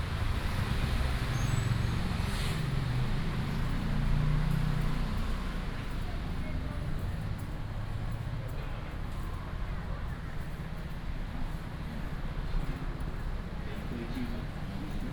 Bo’ai St., Zhunan Township, Miaoli County - walking in the Street
walking in the Street, Traffic Sound, Footsteps, Breakfast shop
18 January 2017, 08:30